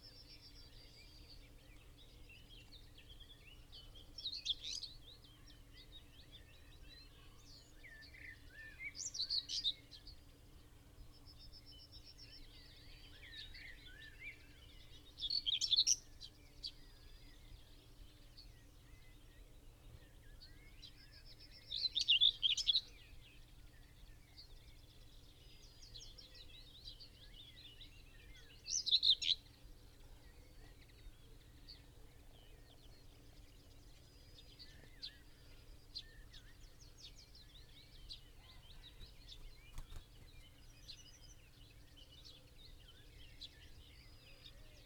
whitethroat singing down a hedgerow ... lavalier mics clipped to a bush ... bird sings from its song post ... moves away down the hedgerow and then returns numerous times ... bird call ... song from ... blackbird ... song thrush ... linnet ... willow warbler ... yellowhammer ... wren ... pheasant ... crow ... wood pigeon ... some background noise ...